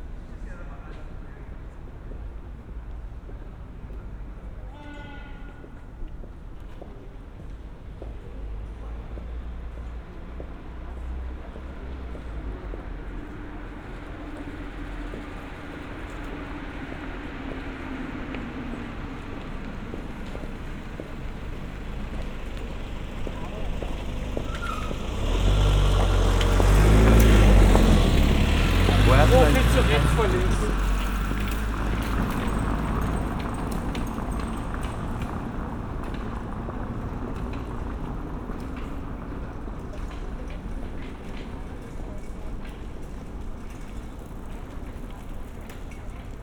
Berlin: Vermessungspunkt Maybachufer / Bürknerstraße - Klangvermessung Kreuzkölln ::: 29.06.2012 ::: 02:12